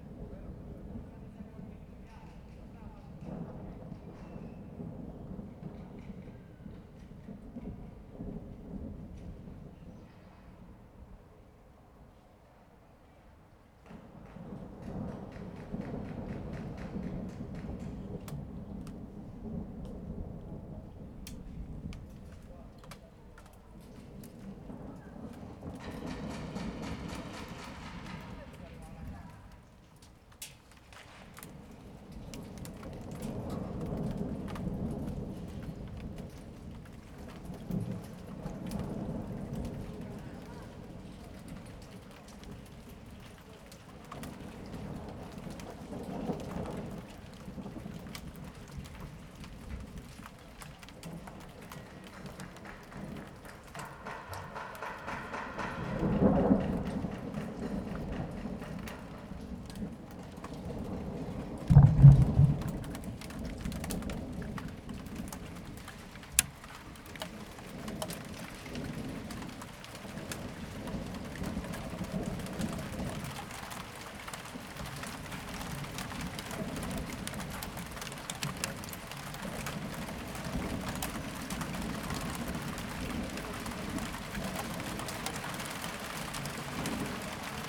"Wednesday afternoon with storm and rain in the time of COVID19" Soundscape
Chapter XCVI of Ascolto il tuo cuore, città. I listen to your heart, city
Wednesday, June 3rd 2020. Fixed position on an internal terrace at San Salvario district Turin, eighty-five days after (but day thirty-one of Phase II and day eighteen of Phase IIB and day twelve of Phase IIC) of emergency disposition due to the epidemic of COVID19.
Start at 5:02 p.m. end at 5:48 p.m. duration of recording 45’32”